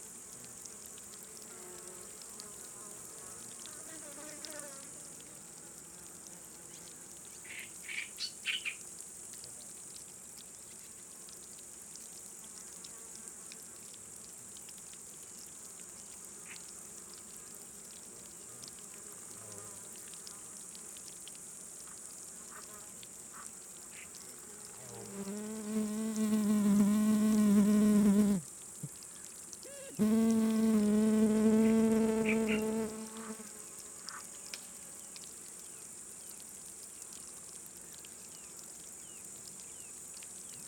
Sea shore bubbles, Jaaguranna
bubbles coming from under the water
18 July, ~22:00